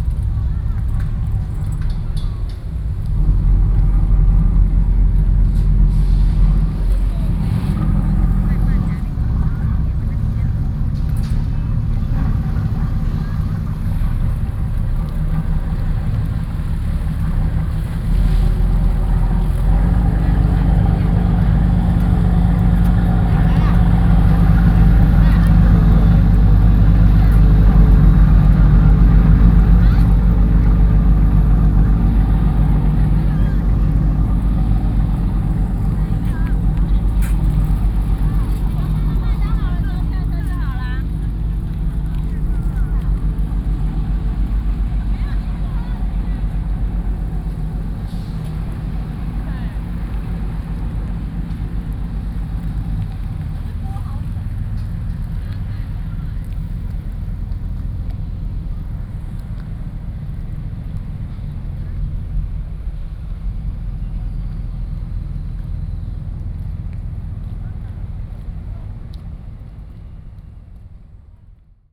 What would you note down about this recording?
Fishing boats, Traveling through, Sony PCM D50 + Soundman OKM II